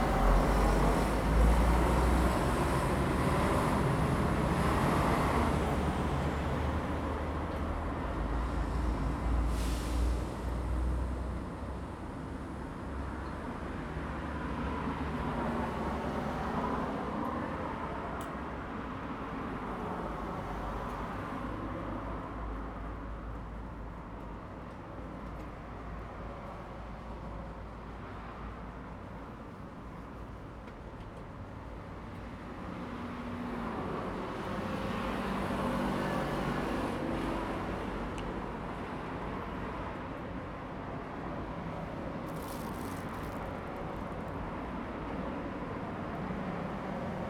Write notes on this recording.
In front of the convenience store, Traffic Sound, Very hot weather, Zoom H2n MS+XY